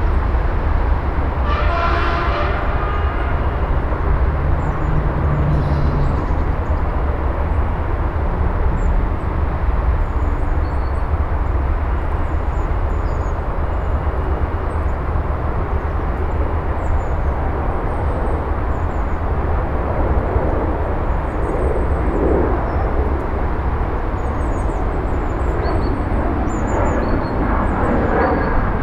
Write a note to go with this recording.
Diegem, the abandoned house. Diegem, la maison abandonnée.